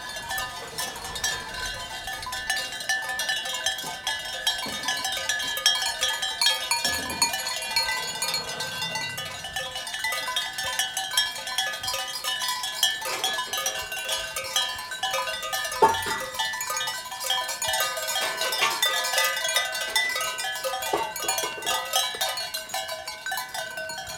Geraci Siculo PA, Italia [hatoriyumi] - Gregge di capre, voci di pastorello e campanacci
Gregge di capre, voci di pastorello e campanacci
Italy, 21 May